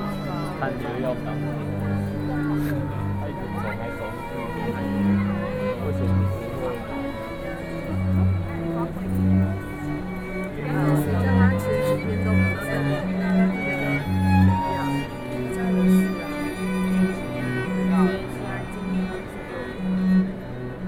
世界貿易中心展覽大樓(世貿一館), Taipei city - In Art Fair